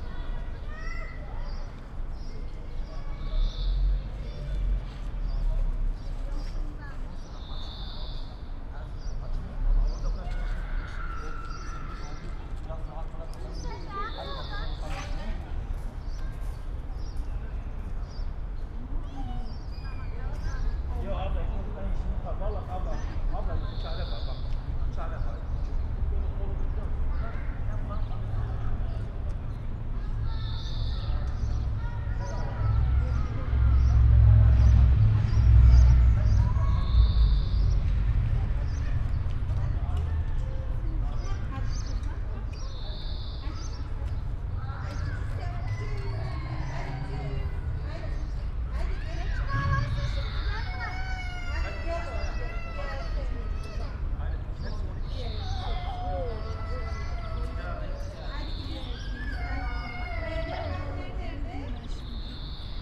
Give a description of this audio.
Schinkestr., playground afternoon ambience, (Sony PCM D50, Primo EM172)